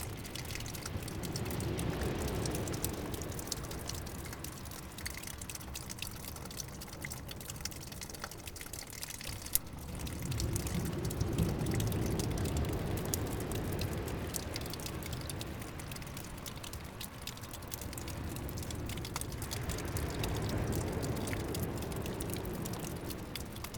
Kilkeel Beach 4
A small water stream